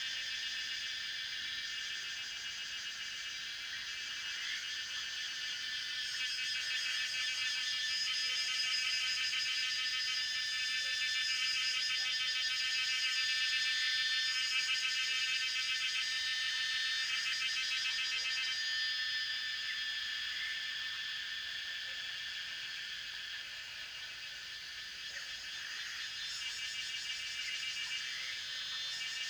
中路坑, 桃米里, Puli Township - Cicada and Bird sounds
Cicada sounds, Bird sounds, Frogs chirping
Zoom H2n Spatial audio
Puli Township, 機車道, 6 June 2016, 17:50